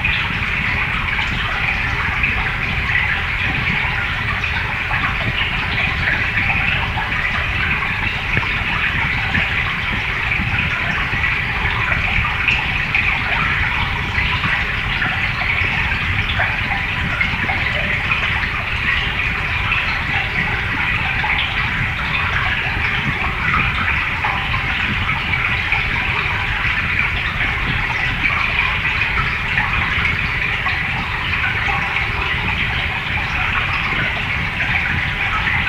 Craighead Avenue Park, Glasgow, Glasgow City, UK - Hydrophone recording of Molendinar Burn
Underwater hydrophone recording of Molendinar Burn where it disappears into underground Culvert at Craighead Avenue park.